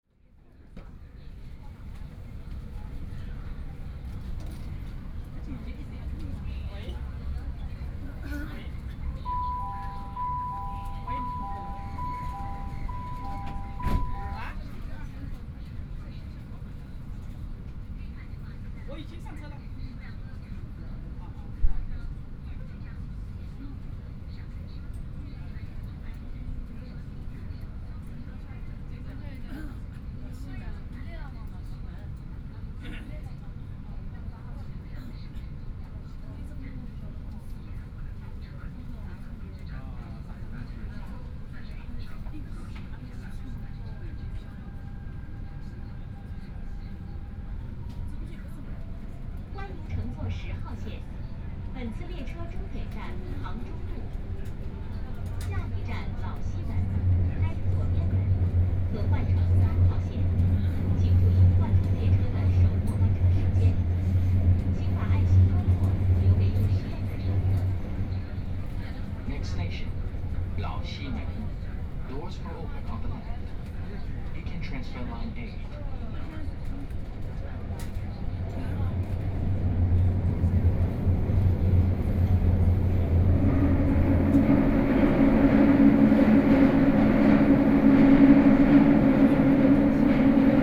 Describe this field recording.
from Yuyuan Garden station to Laoximen station, Binaural recording, Zoom H6+ Soundman OKM II